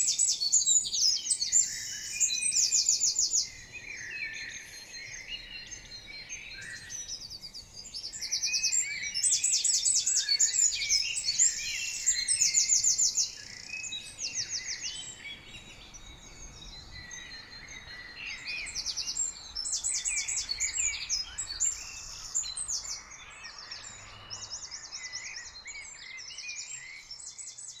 Mont-Saint-Guibert, Belgique - Birds on the early morning
Birds singing on the early morning. Spring is a lovely period for birds.
Common chaffinch, blackbird, greenfinch, european robin, and great tit.